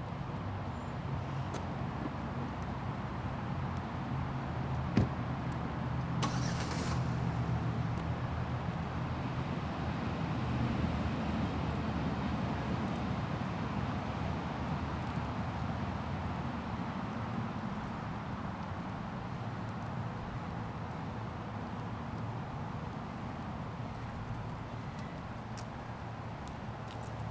Woodland Park, Seattle WA
Part one of soundwalk in Woodland Park for World Listening Day in Seattle Washington.
Seattle, WA, USA